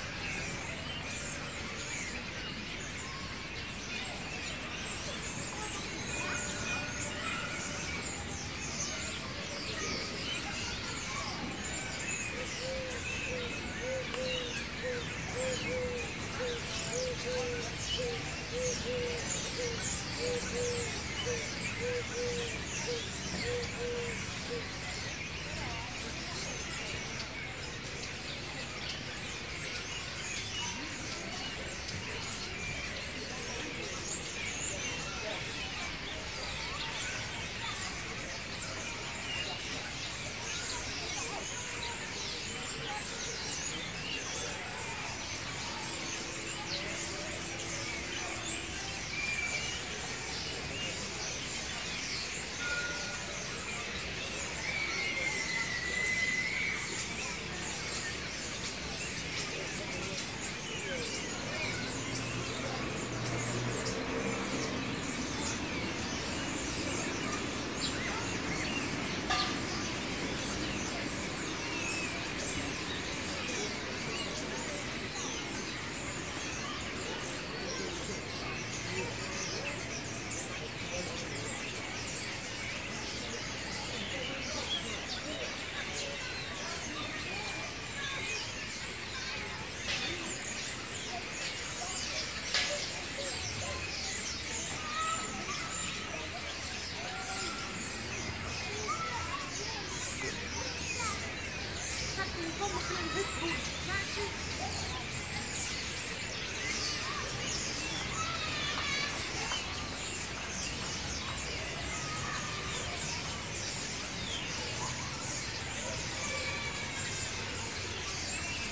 {
  "title": "Jardin Thiole, Liberation, Nice, France - Evening starlings and children",
  "date": "2016-02-25 16:54:00",
  "description": "Sitting below a tree with the recorder pointing straight up at the noisy starlings. To the lef tyou can hear the children chirping and calling (and banging on the slide) and to the right you can hear the trams go by. Early in the recording is a loud bang which the starlings react to instantly.\n(recorded with Zoom H4n internal mics)",
  "latitude": "43.71",
  "longitude": "7.26",
  "altitude": "24",
  "timezone": "Europe/Paris"
}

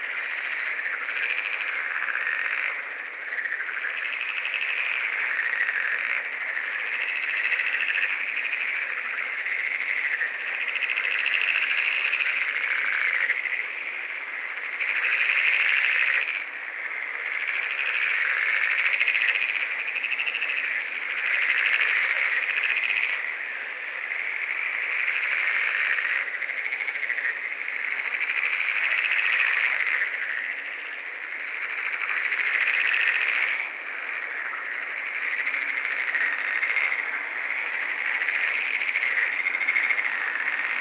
{
  "title": "Utena, Lithuania, frogs chorus",
  "date": "2022-05-23 23:10:00",
  "description": "I went to watch/listen bats over local swamp, but there was another grand chorus - frogs.",
  "latitude": "55.52",
  "longitude": "25.60",
  "altitude": "107",
  "timezone": "Europe/Vilnius"
}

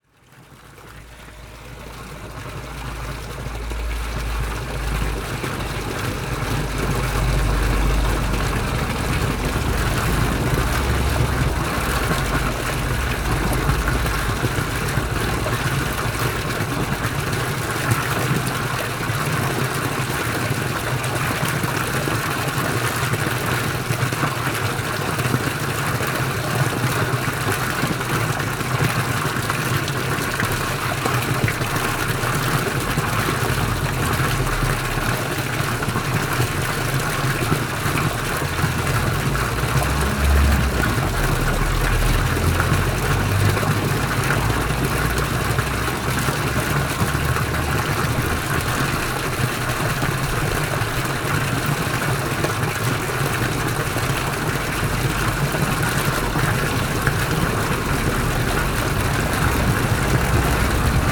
{
  "date": "2011-07-30 17:23:00",
  "description": "Fromelennes, Place des Rentiers, the fountain",
  "latitude": "50.12",
  "longitude": "4.86",
  "altitude": "118",
  "timezone": "Europe/Paris"
}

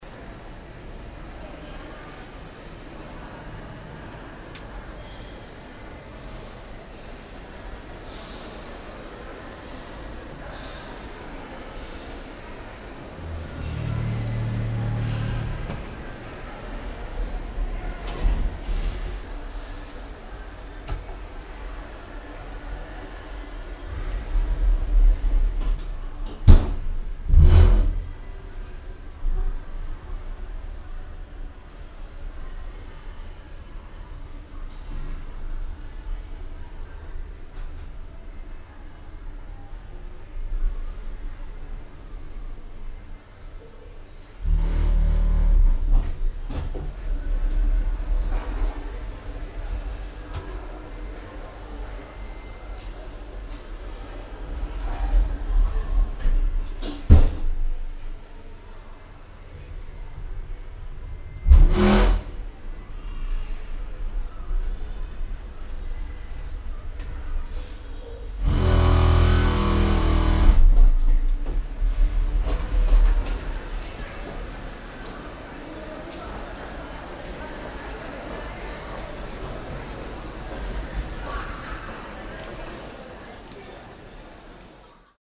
{
  "title": "cologne, neumarkt, passage, aufzug",
  "date": "2008-06-01 09:38:00",
  "description": "soundmap: köln/ nrw\nneumarkt passage, shopping mall, atmo und aufzugfahrt, nachmittags\nproject: social ambiences/ listen to the people - in & outdoor nearfield recordings",
  "latitude": "50.94",
  "longitude": "6.95",
  "altitude": "66",
  "timezone": "Europe/Berlin"
}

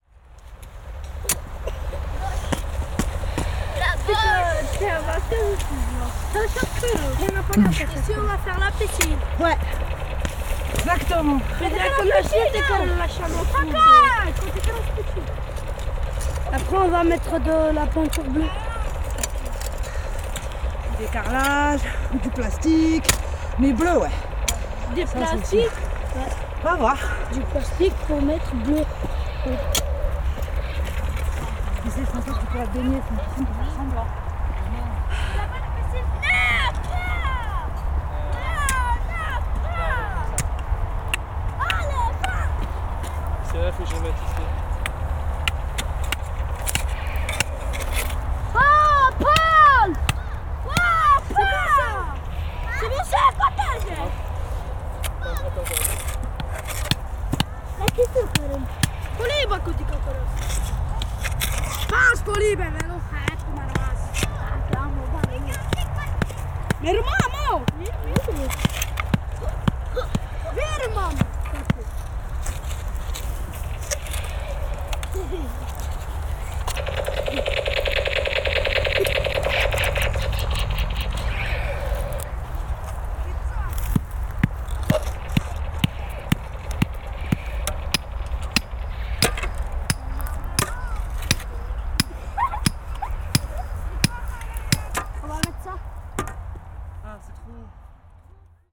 {
  "title": "Toulouse, France - Children are gardening...",
  "date": "2016-12-09 17:00:00",
  "description": "This is a field recording by binaural microphones : It's really better to listen it with your headphones. Sound in 360! It was recorded in december 2016, at 4PM (afternoon). Children are helping artists who are working on an exhibition project on this specific place...",
  "latitude": "43.63",
  "longitude": "1.48",
  "altitude": "136",
  "timezone": "GMT+1"
}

